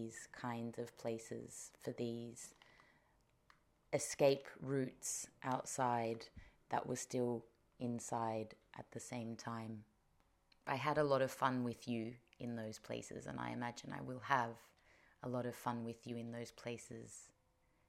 Chickens, hedgehogs, foxes, trees, Leeds
Leeds, UK, 8 March 2011, 14:41